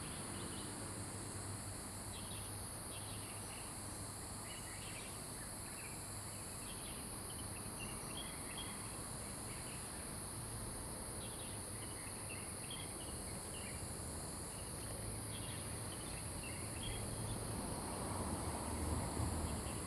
埔里鎮桃米里, Taiwan - In the parking lot
Birds singing, Chicken sounds, Dogs barking
Zoom H2n MS+XY
Puli Township, 桃米巷33-1號